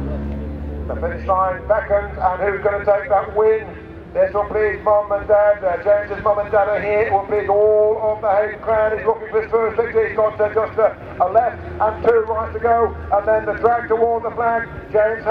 Silverstone Circuit, Towcester, UK - BSB 1998 ... Superbikes ... Race 1 ...
BSB 1998 ... Superbikes ... Race 1 ... commentary ... one point stereo mic to minidisk ... almost the full race distance ... time is optional ...